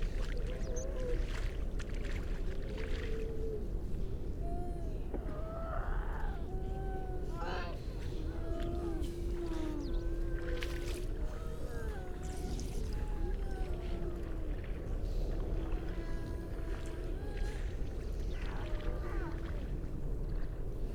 grey seals soundscape ... generally females and pups ... parabolic ... bird calls ... pipit ... crow ... pied wagtail ... skylark ... all sorts of background noise ...
England, United Kingdom, 3 December 2019, 09:45